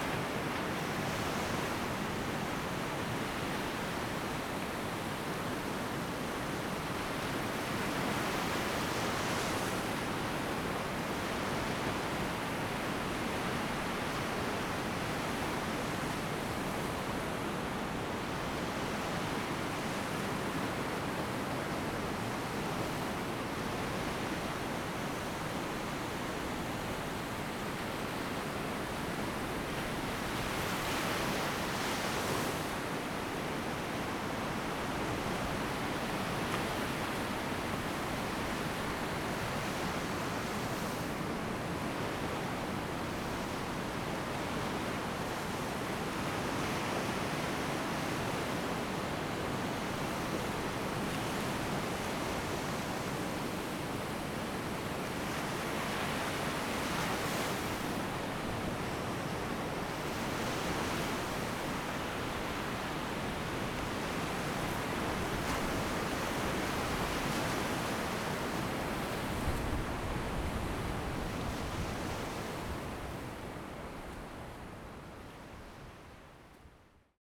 東港村, Zhuangwei Township, Yilan County - On the beach
Sound of the waves, River to the sea, On the beach
Zoom H2n
18 November 2016, ~16:00